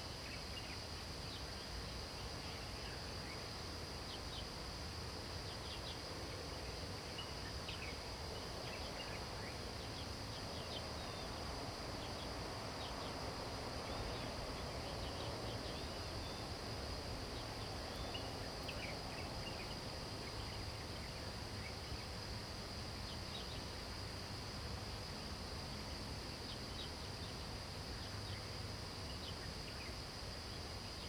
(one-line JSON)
{"title": "Taomi Ln., Puli Township, Taiwan - Birds singing", "date": "2015-09-04 06:31:00", "description": "Early morning, Bird calls\nZoom H2n MS+XY", "latitude": "23.94", "longitude": "120.94", "altitude": "455", "timezone": "Asia/Taipei"}